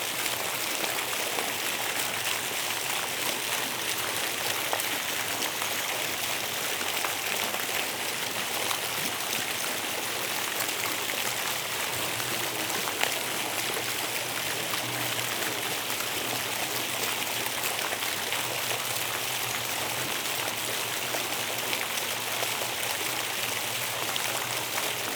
Mechelen, Belgique - Fountains
The Jef Denyn fountains. The stream is completely aleatory. At the beginning, a worried moorhen.